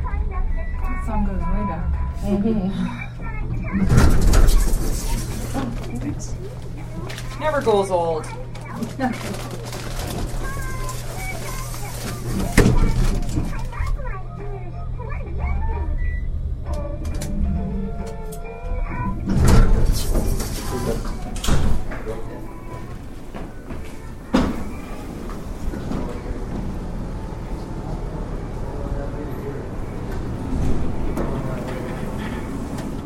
St. Luke's Hospital, Milwaukee, WI, USA - elevator
25 September 2016, ~12:00